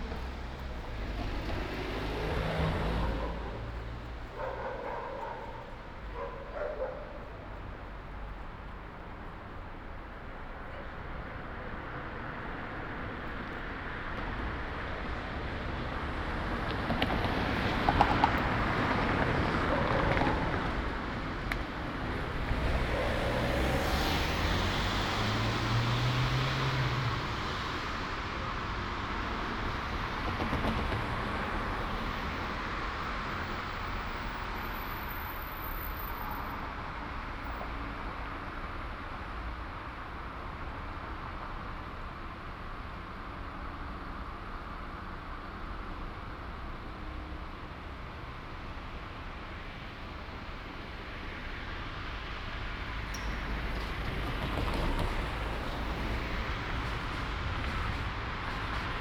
Ascolto il tuo cuore, città. I listen to your heart, city. **Several chapters SCROLL DOWN for all recordings ** - Evening walking without rain in the time of COVID19 Soundwalk
"Evening walking without rain in the time of COVID19" Soundwalk
Chapter LII of Ascolto il tuo cuore, città. I listen to your heart, city
Tuesday April 21th 2020. San Salvario district Turin, walking to Corso Vittorio Emanuele II and back, forty two days after emergency disposition due to the epidemic of COVID19.
Start at 7:22 p.m. end at 4:43 p.m. duration of recording 28’00”
The entire path is associated with a synchronized GPS track recorded in the (kmz, kml, gpx) files downloadable here:
Piemonte, Italia, 21 April 2020